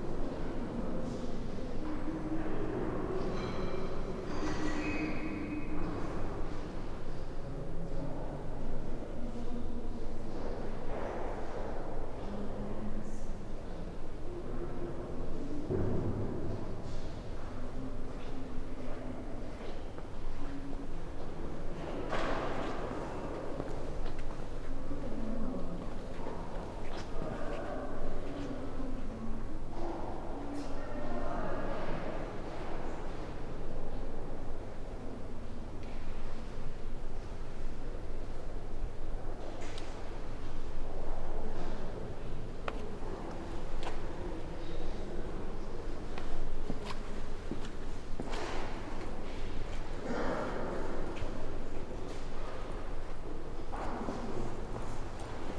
Inside Sé de Évora (church), footsteps, people talking, resonant space, stereo, AKG MS setup. Canford preamp, microtrack 2496, June 2006